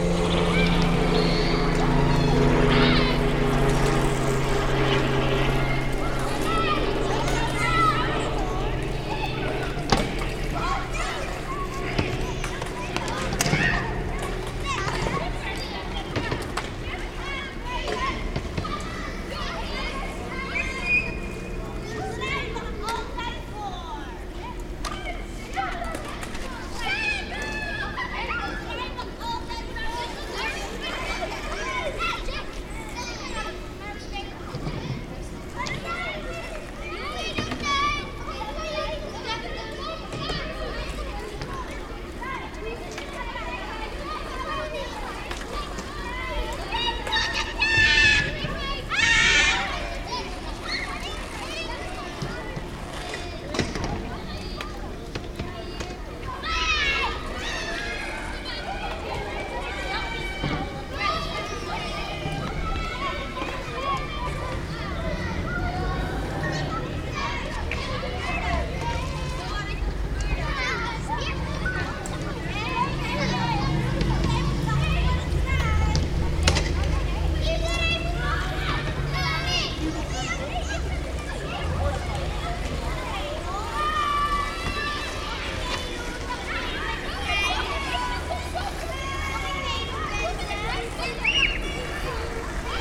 Willem Buytewechstraat, Rotterdam, Netherlands - Kids skateboarding
A group of kids practices skateboarding on the small football pitch. A helicopter and a plane join the soundscape too. The architecture of this location creates a reverberant acoustic that seems to amplify the sounds. The recording was made using Uši Pro pair and zoom H8.
Zuid-Holland, Nederland, 3 June 2022, 13:00